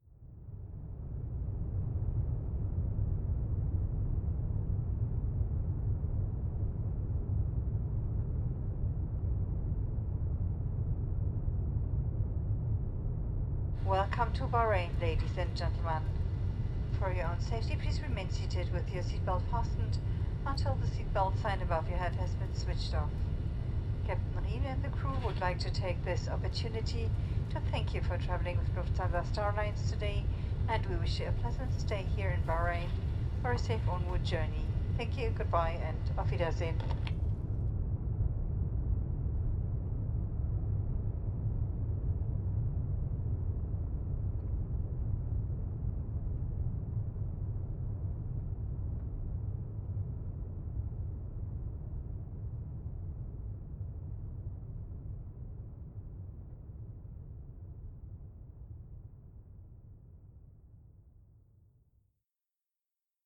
Aéroport de Bahreïn-Muharraq, Rd, Muharraq, Bahreïn - Barhain International Airport - Flight LH0636 form Frankfurt
Barhain International Airport - Flight LH0636 form Frankfurt
Ambiance dans l'avion à l'atterrissage.